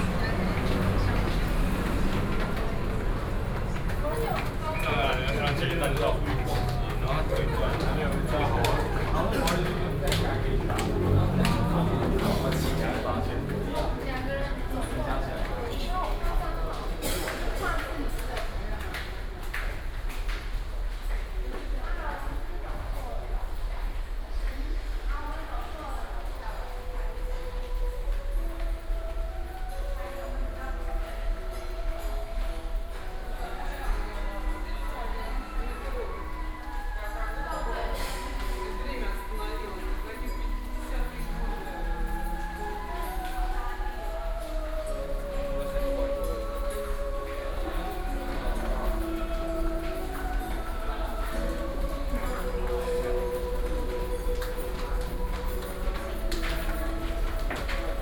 Sec., Roosevelt Rd., Da’an Dist. - Walking in the underpass
Walking in the underpass, Traffic Sound
Zoom H4n+ Soundman OKM II
Zhongzheng District, Taipei City, Taiwan, 28 June